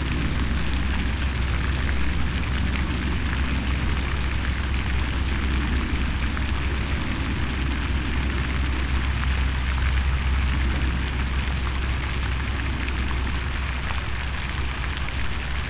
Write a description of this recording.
This is a pond with a fountain located in the Smyrna Market Village which is frequented by water fowl. It's near a road and sidewalk, so you can hear traffic sounds and bikes. There was a visitor sitting in the swing bench on the left while I was taking the recording. Recorded with Tascam Dr-100mkiii with dead cat wind screen.